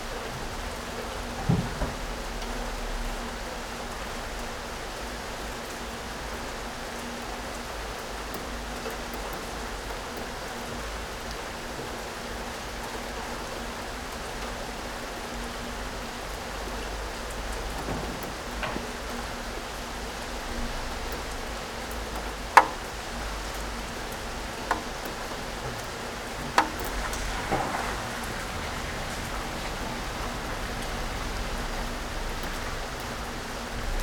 from/behind window, Mladinska, Maribor, Slovenia - rain, november
12 November 2014, ~15:00